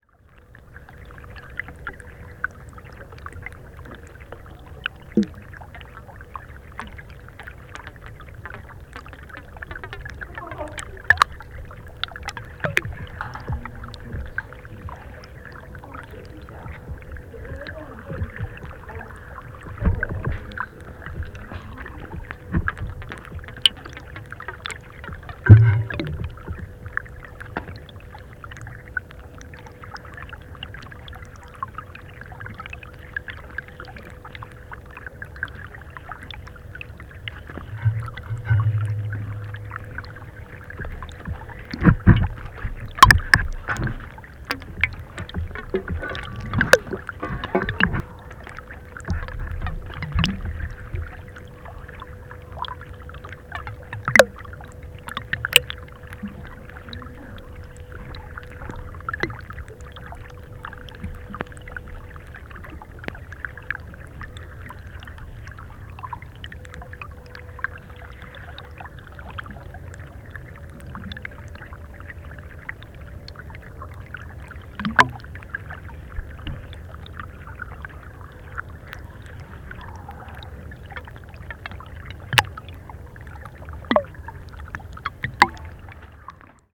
water dripping, flowing sound in the coal relic.
Zoom H6 with Aquarian H2a hydrophone.
臺灣